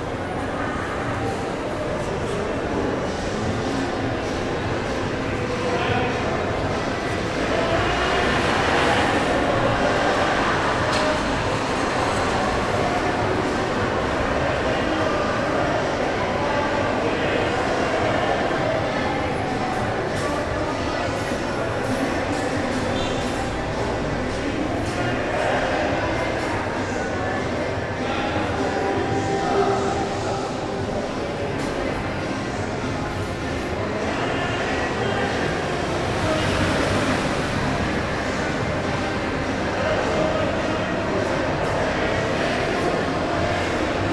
Cl., Medellín, Belén, Medellín, Antioquia, Colombia - Mall Alpes
Se escucha personas hablando, el sonido de bus, el sonido de cosas siendo arrastradas, el viento, música.
Valle de Aburrá, Antioquia, Colombia